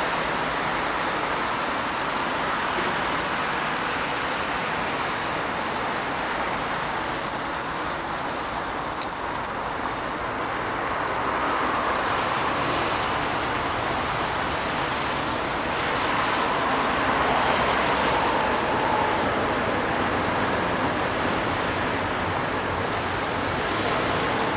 ул. Вавилова, строение, Москва, Россия - On Vavilova street
On Vavilova street near Gagarinsky shopping center, where there is a pedestrian crossing. You can hear cars driving on wet asphalt, the snow is melting. Warm winter. Evening.
Центральный федеральный округ, Россия